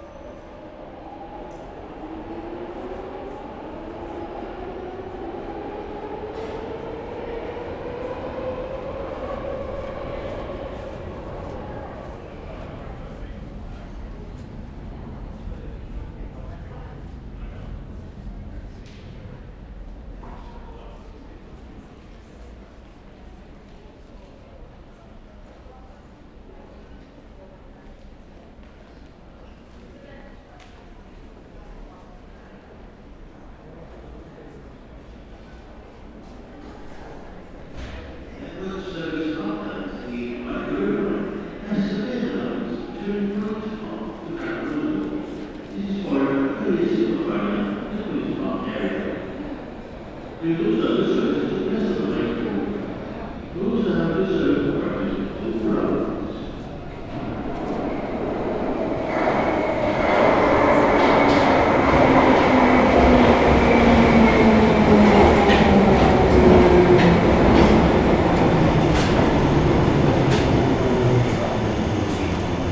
Oxford Street, London, Royaume-Uni - Tube Oxford Circus
Waiting fo the tube in Oxford Circus Station, Zoom H6
London, UK, 16 March 2016